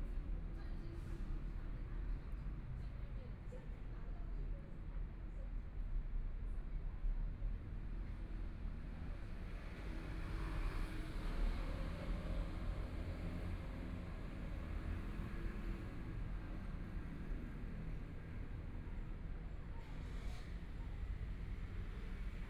Taipei, Taiwan - In the track below
In the track below, By the sound of trains, Traffic Sound, Binaural recordings, Zoom H4n+ Soundman OKM II
Datong District, Taipei City, Taiwan, 20 January, 17:44